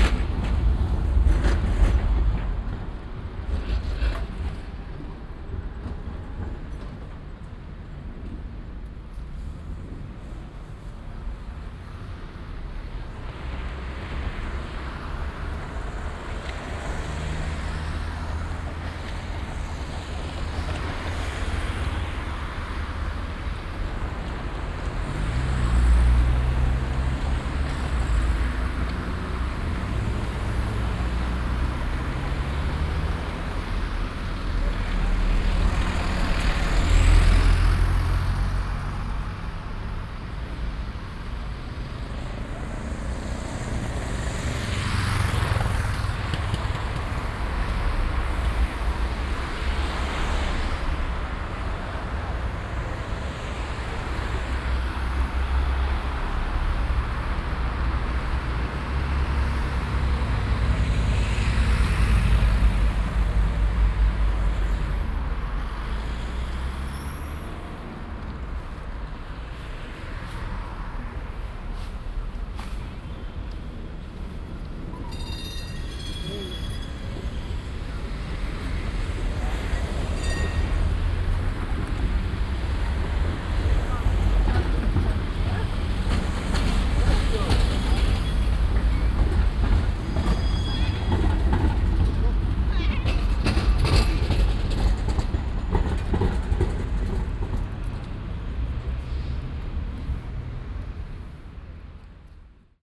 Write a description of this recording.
strassen- und bahnverkehr am stärksten befahrenen platz von köln - aufnahme: morgens, soundmap nrw: